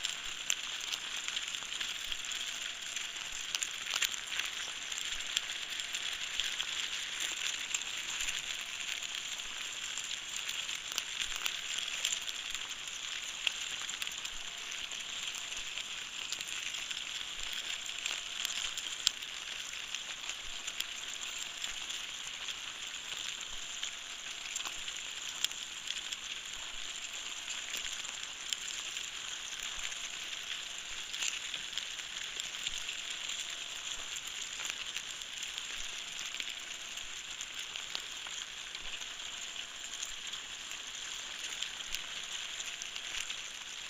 Anthill at the shore side of the rapids Husån. Recorded with piezo transducer at the World Listening Day, 18th july 2010.
Sweden, July 2010